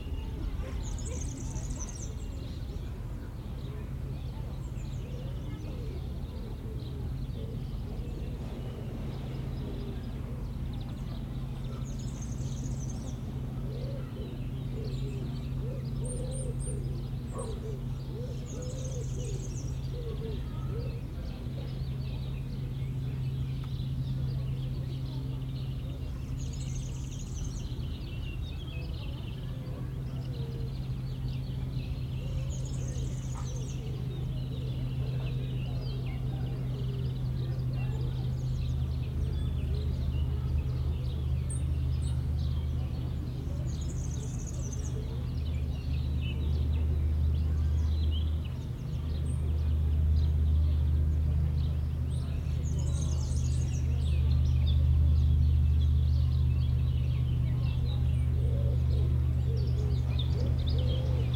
Sous un résineux de la pelouse arborée, on retrouve les oiseaux caractéristiques des parcs et jardins, le bavard serin cini et le modulent verdier. Enrobage grave des bruits de moteurs, bateaux, motos, avions .... cette pelouse va servir de camping pour le festival Musilac. Elle est très fréquentée comme lieu de détente.